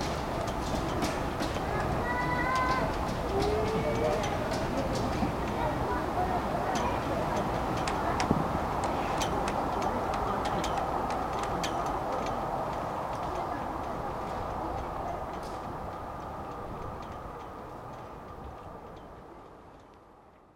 Overijse, Belgique - Strong wind
A strong wind blows in the boat matts. The sails make caracteristic noises.